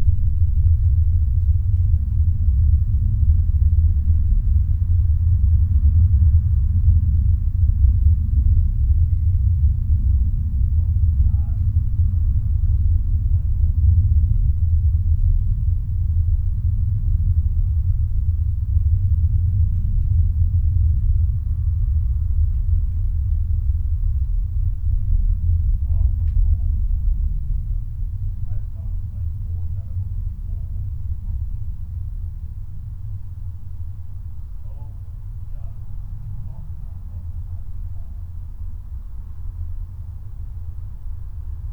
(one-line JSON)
{"title": "A Simple Event, Malvern, UK - Event", "date": "2021-06-11 03:57:00", "description": "A simple event, almost nothing, in the middle of the night. A jet plane, a quiet voice and a car passes.\nMixPre 6 II with 2 x Sennheiser MKH 8020s.\n(I learned from this to not use a limiter with Reaper. It makes a noise)", "latitude": "52.08", "longitude": "-2.33", "altitude": "120", "timezone": "Europe/London"}